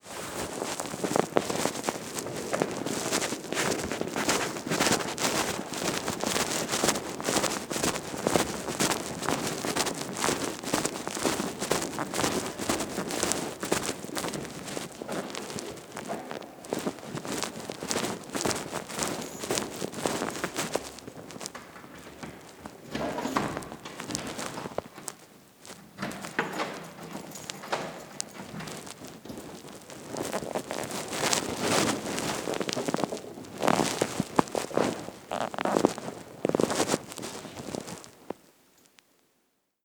Poznan, Piatkowo district, ul. Mateckiego - forgoten phone
forgot the phone was still in recording mode and left it in the pocket. mic rubs against the fabric and picks up all kinds of rustle. sounds from outside of the pocket heavily distorted. walking across the corridor, opening door.
Polska, European Union, 4 January 2013